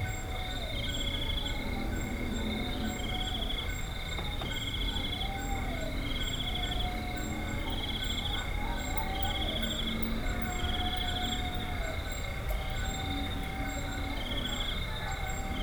Mission school guest house, Chikankata, Zambia - Chikankata school grounds at night
listening out in to the night from the garden of the guest house; some festivities going on in the school grounds... we are spending just one night here as guests of Chiefteness Mwenda; it's a long journey out here; you can hardly make it back and forth in a day to Mazabuka...
4 September 2018, 21:40